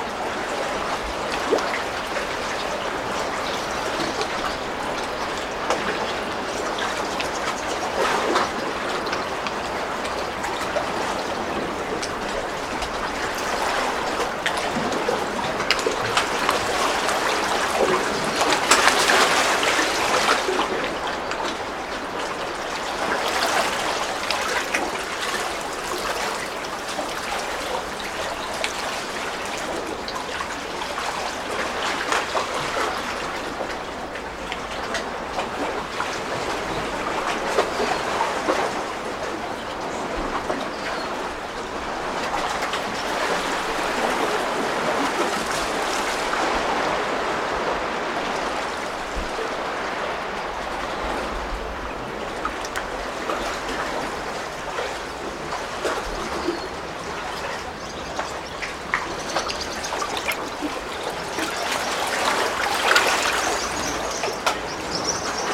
Dog Beach, Sheridan Rd, Evanston, IL, USA - under the rocks
recording under the wave-breaking rocks
5 May 2022, 14:10